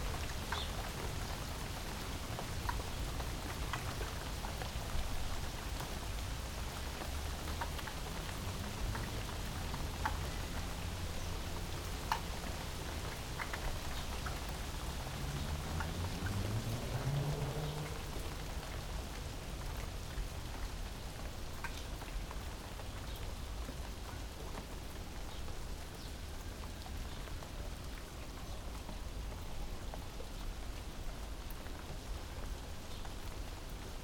Goldman Cl, London, UK - Heavy rain with spots of rumbling thunder
sudden torrential rain following a hot dry spell.
8040 stereo pair into Mixpre 10 II
England, United Kingdom, 17 August